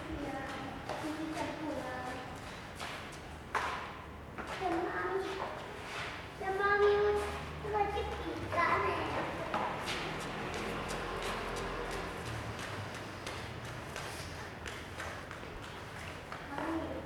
海山宮, Zhonghe Dist., New Taipei City - In the temple
In the temple, Child and mother, Traffic Sound
Sony Hi-MD MZ-RH1 +Sony ECM-MS907
New Taipei City, Zhonghe District, 員山路383巷3弄6號